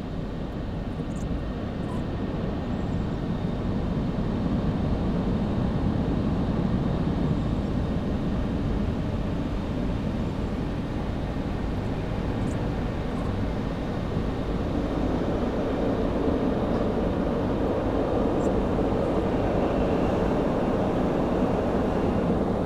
Washing Car Service
Inside a car being washed! Applied limiter.
Barcelona, Spain, 8 March